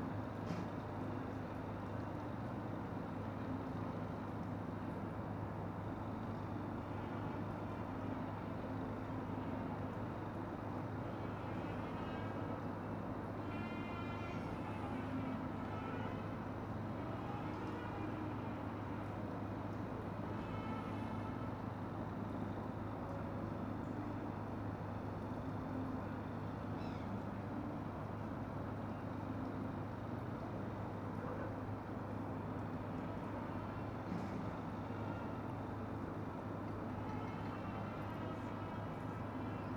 Baltic Square, Gateshead, UK - Sunday morning by Gateshead Millennium Bridge
Recorded on a handheld Tascan DR-05 stood next to the Gateshead Millenium Bridge. Noise of busker and Sunday market can be heard from the Newcastle side of the River Tyne.
England, United Kingdom